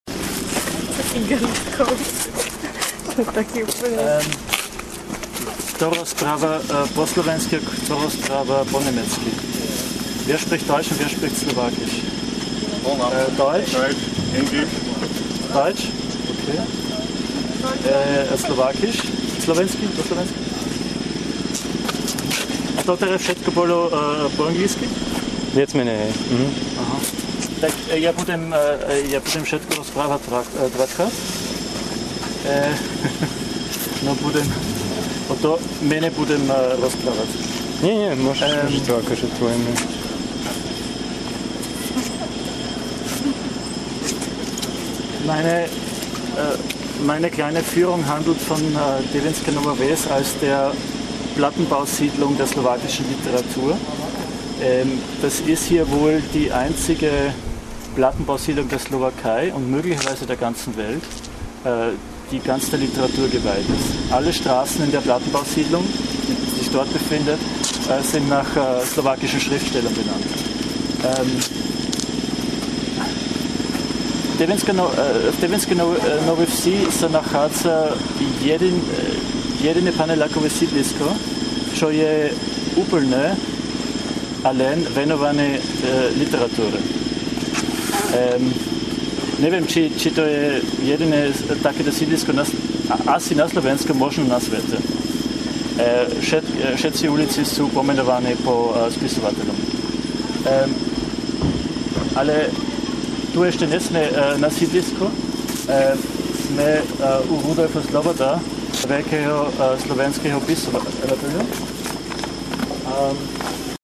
devinska nova ves, nam. rudolfa slobodu
martin leidenfrost at the start of his guided tour through the plattenbausiedlung der slowakischen literatur
Devínska Nová Ves, Slovakia